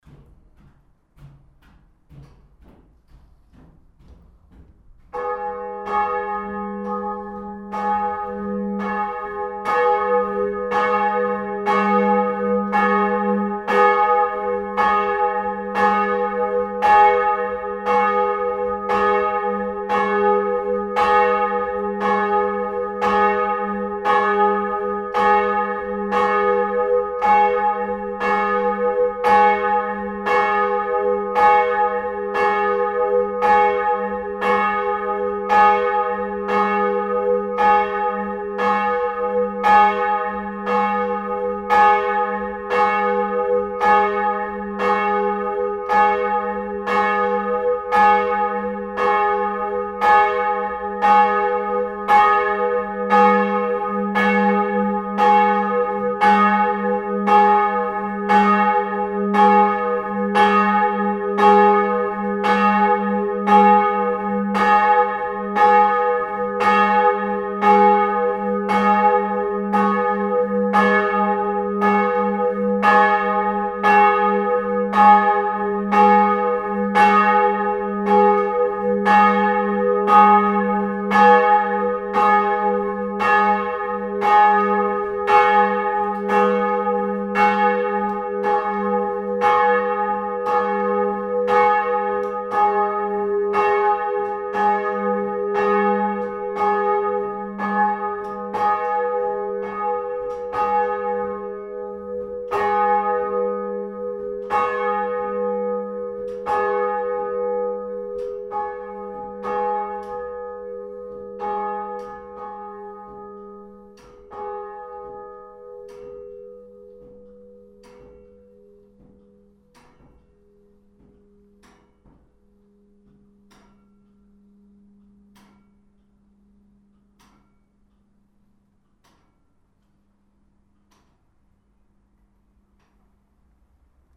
{"title": "essen, old catholic church, bells - essen, friedenskirche, glocken", "date": "2011-06-10 11:22:00", "description": "and the next one ...\nProjekt - Klangpromenade Essen - topographic field recordings and social ambiences", "latitude": "51.46", "longitude": "7.02", "timezone": "Europe/Berlin"}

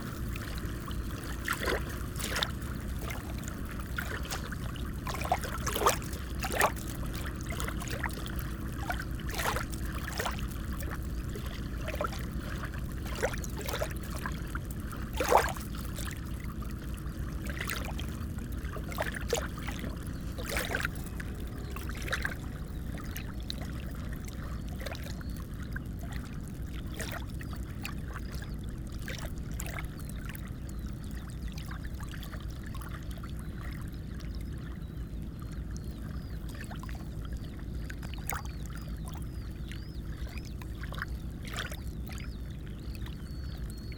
{"title": "LAiguillon-sur-Mer, France - Pointe dArçay", "date": "2018-05-24 08:40:00", "description": "The end of the end of Pointe d'Arçay, a sandy jetty in the sea. The small waves and a fishing vessel passing.", "latitude": "46.28", "longitude": "-1.26", "timezone": "Europe/Paris"}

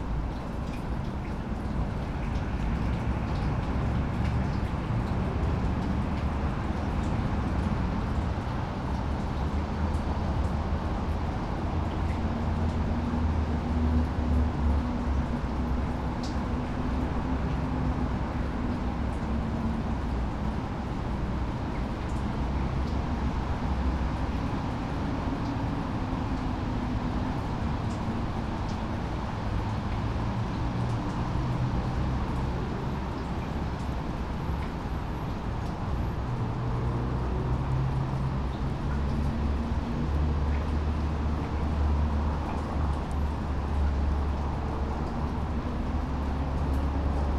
{"title": "Lithuania, Vilnius, drainage and cityscape", "date": "2012-11-06 14:10:00", "latitude": "54.69", "longitude": "25.29", "altitude": "95", "timezone": "Europe/Vilnius"}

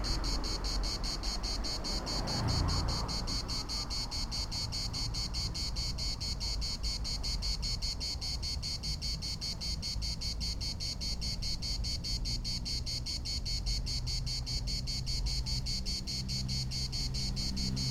{"title": "France - Cicada", "date": "2013-07-13 14:19:00", "description": "We were walking along the beach and up Boulevard de Bacon, and I became aware that wherever there were trees, there was this incredible drone of what I think must be cicadas. It's an incredibly loud sound, a wash of white noise emanating all along the coast, from wherever there are trees. As we walked along the road, we became aware of one single cicada song standing out from all of the rest and I sat down to listen to and record the song with my trusty EDIROL R-09.", "latitude": "43.57", "longitude": "7.13", "altitude": "7", "timezone": "Europe/Paris"}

